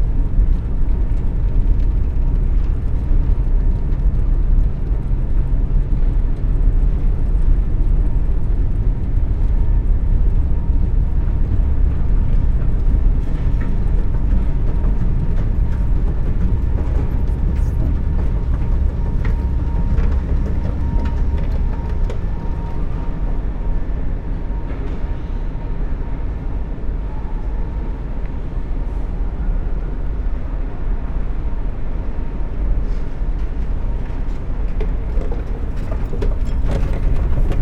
Yerevan, Arménie - Cascade complex
Cascade park is an enormous artistic complex, posed on a hill. Inside, there's a huge collection of escalators, going to the top and making drone sounds. Built during the Soviet era, Cascade is big, very big ! That's why there's so much reverb inside the tunnel.